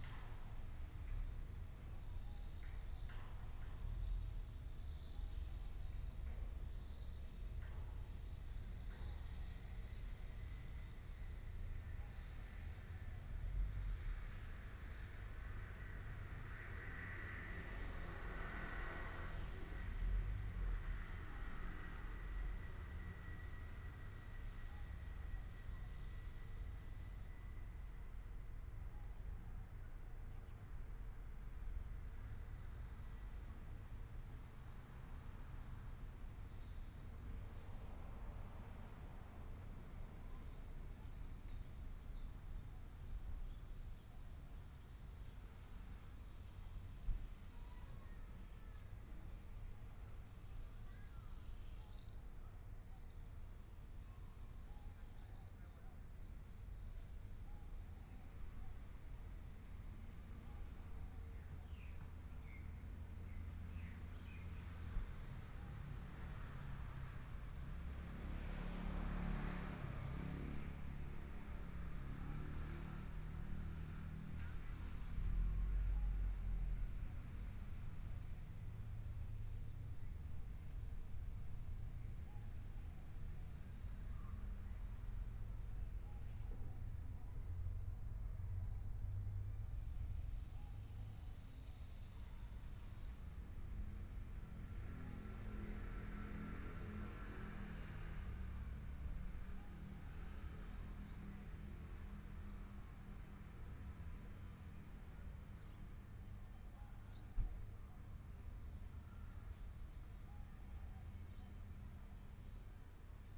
Construction Sound, Birds sound, Traffic Sound, Environmental sounds
Please turn up the volume
Binaural recordings, Zoom H4n+ Soundman OKM II
24 February, ~14:00